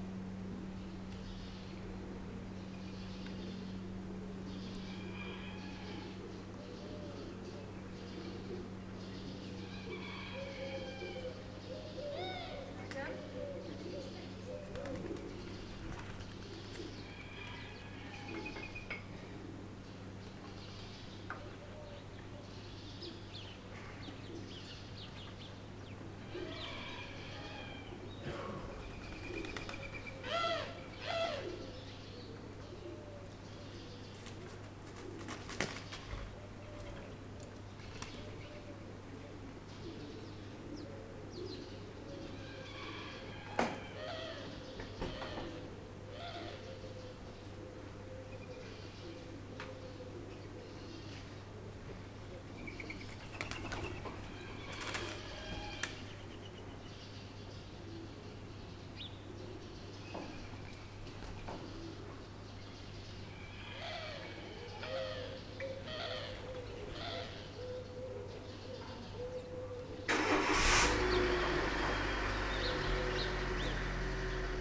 Corniglia, hostel - thin air
crisp morning ambience over the Corniglia village. church bells have almost all the air molecules for themselves. flocks of pigeons racing over the building. bird calls loop and swirl. first delivery truck departs.
Corniglia, La Spezia, Italy